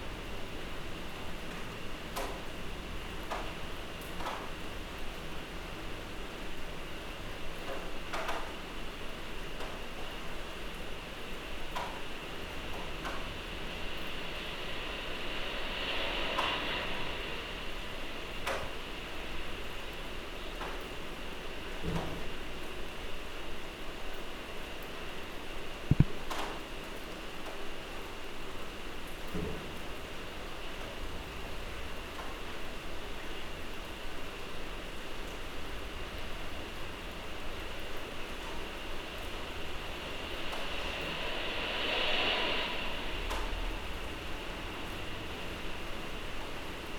corridors, mladinska - intercom, rain, thunder
Slovenija, 11 May 2014